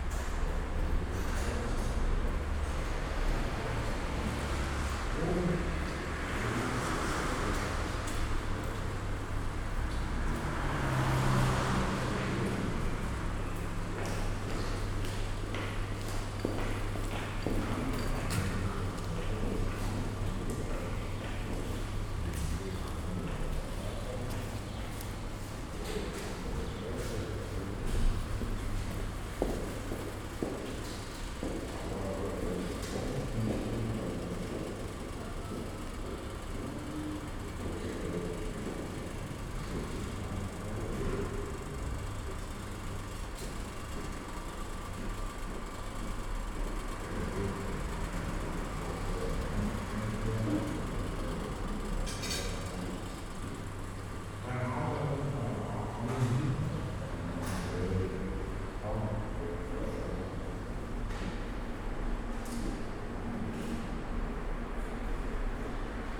ambience at S-Bahn station Sonnenallee, Sunday afternoon
(Sony PCM D50, DPA4060)